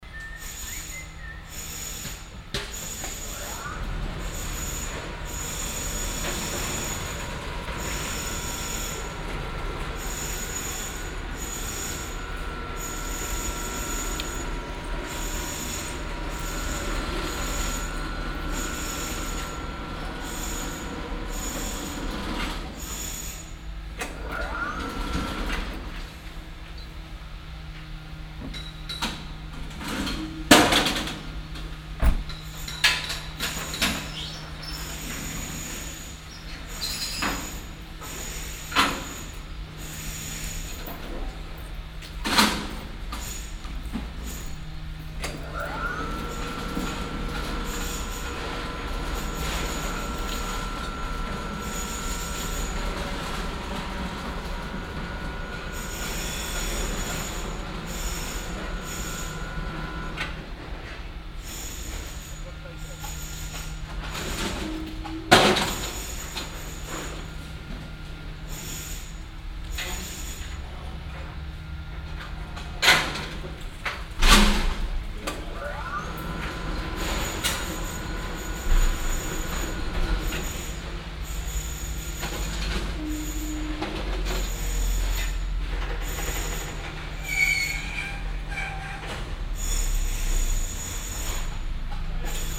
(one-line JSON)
{"title": "refrath, in der taufe, mietshausrenovierung und tiefgaragentor", "description": "arbeiten an mietshausfassade, rufe der arbeiter, fahrten des aussenaufzug, das öffnen des tiefgaragengitters, herausfahren eines pkw, schliessen des tores, hämmern und bohren\nsoundmap nrw - social ambiences - sound in public spaces - in & outdoor nearfield recordings", "latitude": "50.96", "longitude": "7.11", "altitude": "80", "timezone": "GMT+1"}